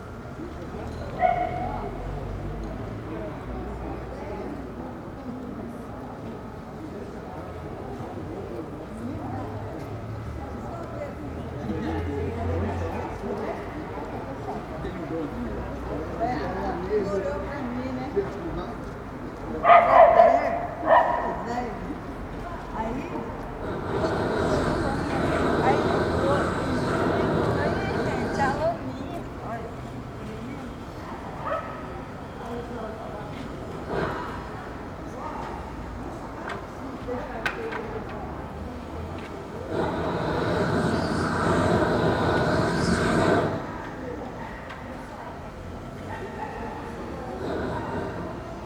Calçadão de Londrina: Obras no feriado - Obras no feriado / Works on Holiday
Panorama sonoro: trabalhadores reformavam a calçada de uma agência bancária no feriado do Dia do Trabalho. Dentre as ferramentas utilizadas, destacava-se um maçarico. Todas as lojas estavam fechadas e algumas pessoas passavam próximas ao local, passeavam com cachorros ou conversavam em frente às portarias de edifícios vizinhos.
Sound panorama:
Workers rested the sidewalk of a bank branch on the Labor Day holiday. Among the tools used, it was highlighted a torch. All the stores were closed and some people passed by, walked dogs, or chatted in front of the offices of neighboring buildings.